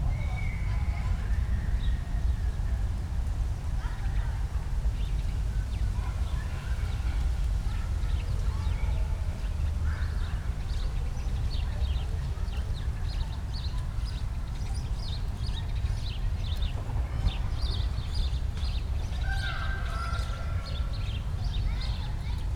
Berlin Gropiusstadt - residential area ambience
place revisited, on a sunny early autumn Sunday, kids playing, early afternoon ambience at Gropiushaus
(Sony PCM D50, DPA4060)
28 September 2014, 13:45